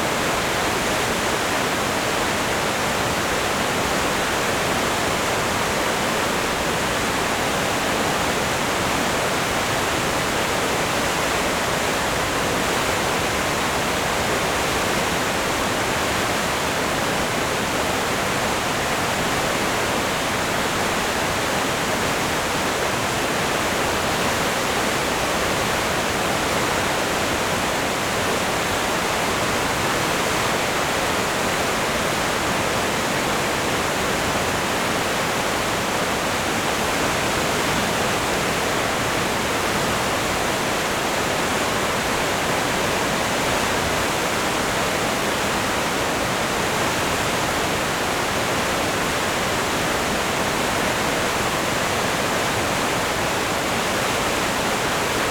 Gesäuse Str., Admont, Österreich - Tor zum Gesäuse
At the entrance to the valley called "Gesäuse" (which denotes a constant, roaring noise) the water of the of the river Enns falls down a steep slope emmitting a roaring noise which ist the origin of the place's name
Steiermark, Österreich